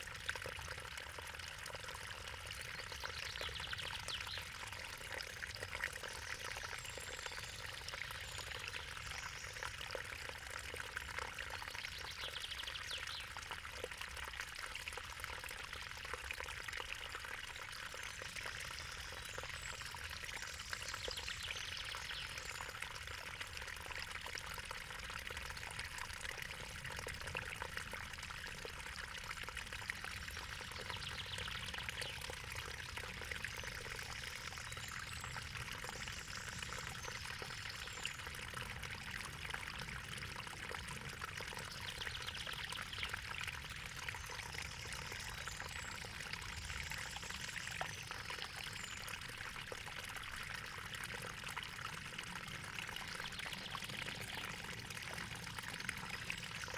little creek 50m from the source. this creek runs dry in summertime since it depends on the water level of the pond.